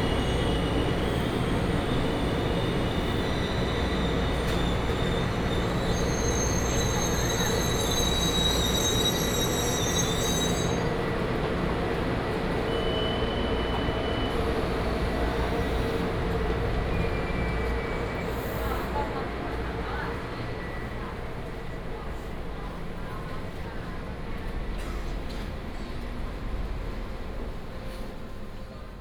Dalin Station, Chiayi County - At the station platform
At the station platform, lunar New Year, birds sound, The train passed
Binaural recordings, Sony PCM D100+ Soundman OKM II